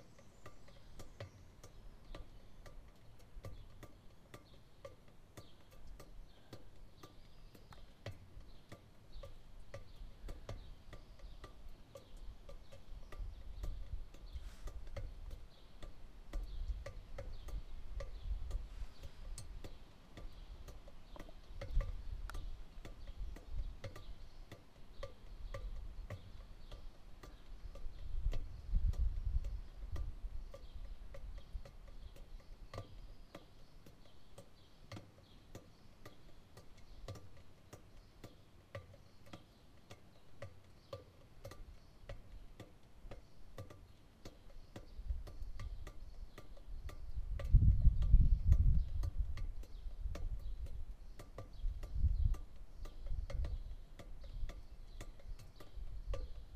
Große Ackerhosgasse, Altstadt, Erfurt, Deutschland - Drain Percussion
Prominent are the percussive sounds occurring in a drain pipe, birds & cars make up the background of this soundscape on an early Sunday morning in Erfurt.
2 June 2013, 08:15